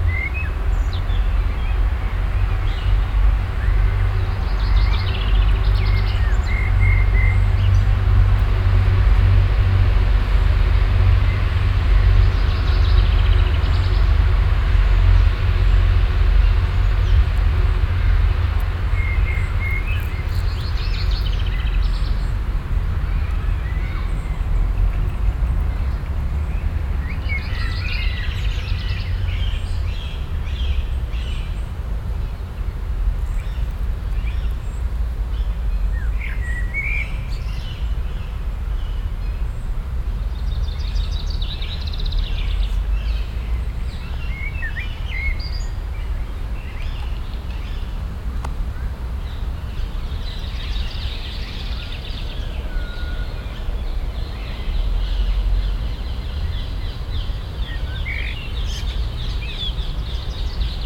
{"title": "cologne, stadtgarten, unter zwei hainbuchen", "date": "2008-06-12 17:13:00", "description": "unter zwei hainbuchen obere, mittlere wiese - stereofeldaufnahmen im juni 08 - nachmittags\nproject: klang raum garten/ sound in public spaces - in & outdoor nearfield recordings", "latitude": "50.94", "longitude": "6.94", "altitude": "53", "timezone": "Europe/Berlin"}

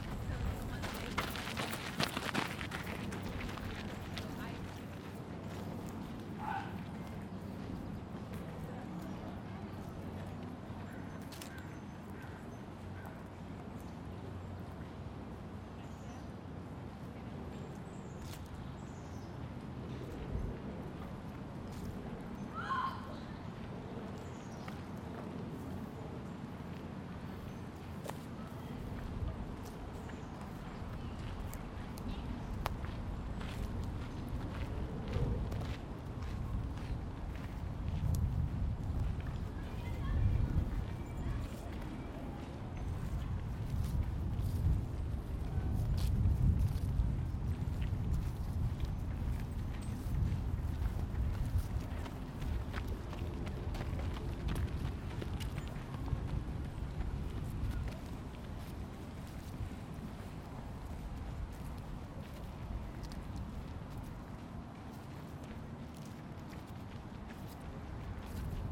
{"title": "Greenlake Park, Seattle Washington", "date": "2010-07-18 12:45:00", "description": "Part four of a soundwalk on July 18th, 2010 for World Listening Day in Greenlake Park in Seattle Washington.", "latitude": "47.67", "longitude": "-122.34", "altitude": "52", "timezone": "America/Los_Angeles"}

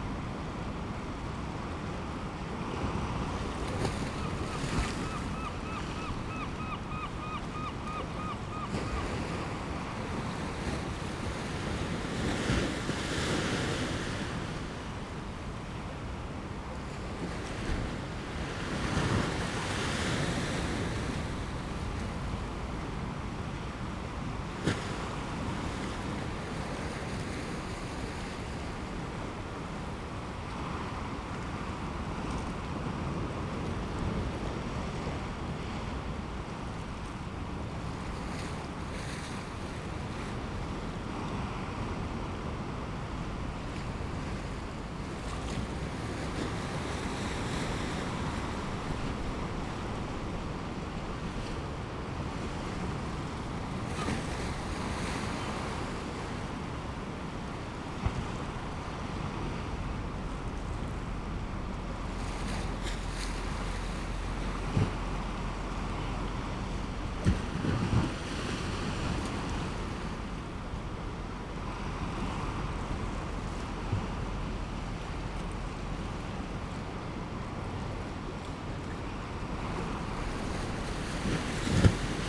{"title": "Whitby, UK - 30 minutes on East Pier ... Whitby ...", "date": "2017-04-29 10:00:00", "description": "30 minutes on Whitby East pier ... waves ... herring gull calls ... helicopter fly thru ... fishing boats leaving and entering the harbour ... open lavalier mics clipped to sandwich box ...", "latitude": "54.49", "longitude": "-0.61", "timezone": "Europe/London"}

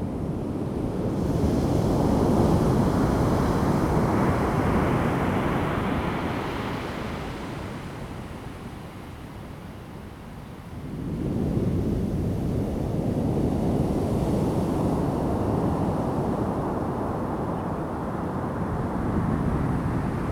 {"title": "太麻里海岸, Taitung County, Taiwan - on the beach", "date": "2018-04-03 17:11:00", "description": "Sound of the waves, on the beach\nZoom H2n MS+XY", "latitude": "22.61", "longitude": "121.01", "altitude": "3", "timezone": "Asia/Taipei"}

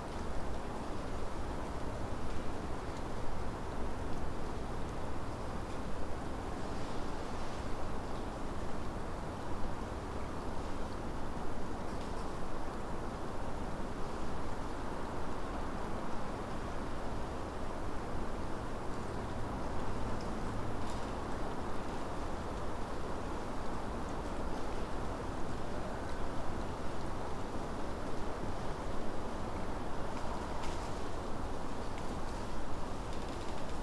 {"title": "Goring Heath, UK - Birchen Copse 10pm", "date": "2017-04-09 22:01:00", "description": "Late evening recording at Birchen Copse, Woodcote. The bleats of sheep from a nearby farm, owls some way off, the creaking of trees and rustling movements of small animals in the bushes close-by, heard over a shifting drone of cars on the nearby A4074, trains on the Reading-Oxford mainline and planes passing high overhead. Recorded using a spaced pair of Sennheiser 8020s at 3m height on an SD788T.", "latitude": "51.52", "longitude": "-1.06", "altitude": "161", "timezone": "Europe/London"}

